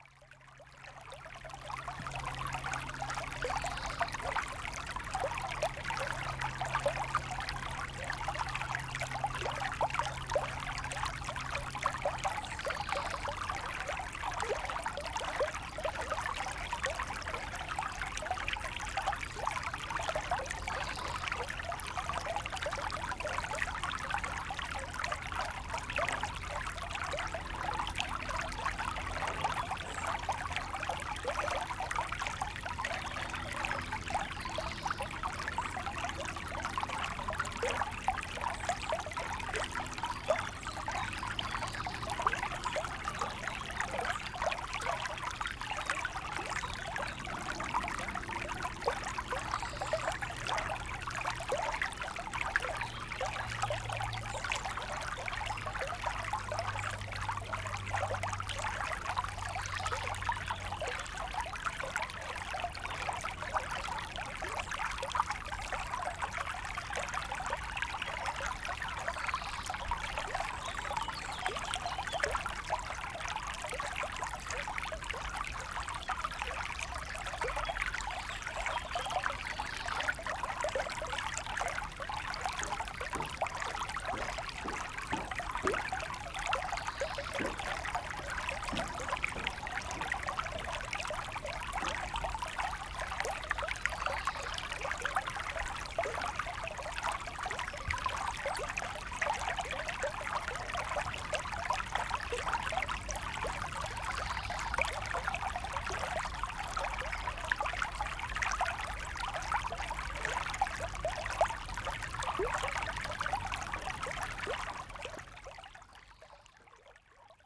Dans le lit du Sierroz, Aix-les-Bains, France - Sur une pierre
Le débit du Sierroz est actuellement très faible, pas de problème pour se déplacer les pieds dans l'eau avec des sandales de plage. ZoomH4npro posé sur une pierre au milieu de l'eau.
France métropolitaine, France, July 2022